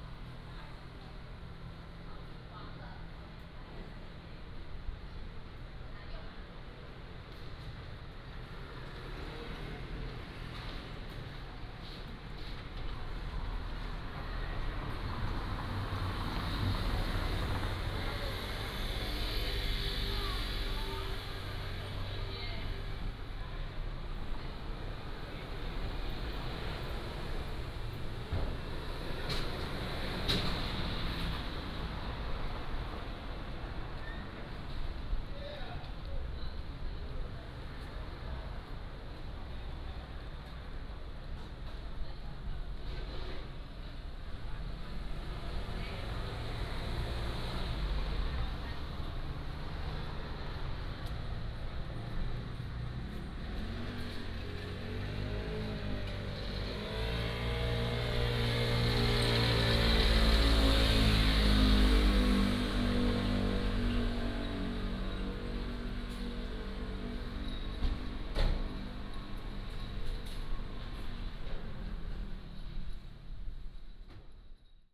Guozhong Rd., Jinsha Township - In front of convenience stores
Small towns, In front of convenience stores, Traffic Sound